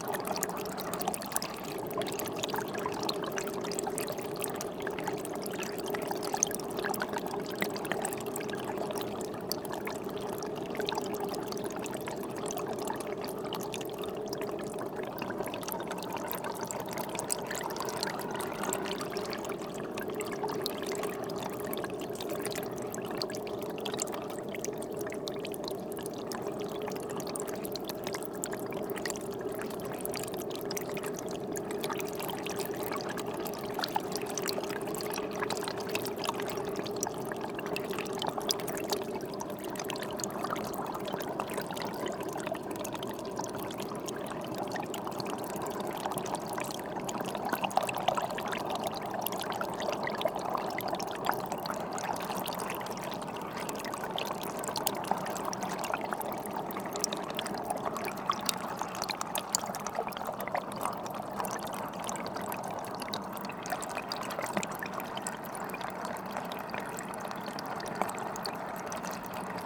A small hole in a stone wall is spitting water. This makes a strange noise. Recorded binaural with microphones in the hole.